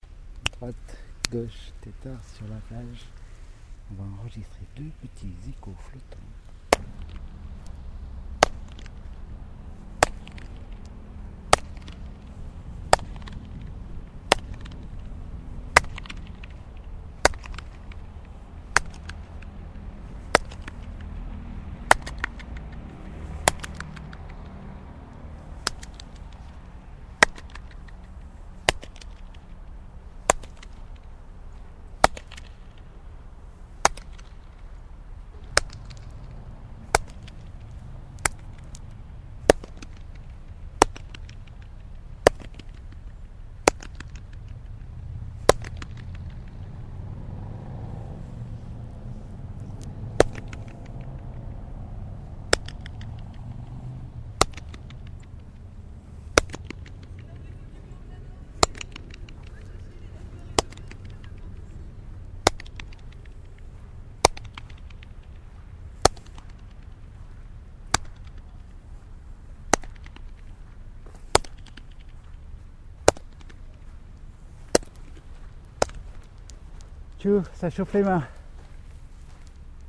circular flutter echoe - Barcelonna Marbella
some places generate a flutter echoe that one can reveal with a simple clap of hands - this one is very specific because made by a circular wall, therefore surrounds you & subtly variates at each step you move - juL
Barcelona, Spain, 26 October 2005, 11:49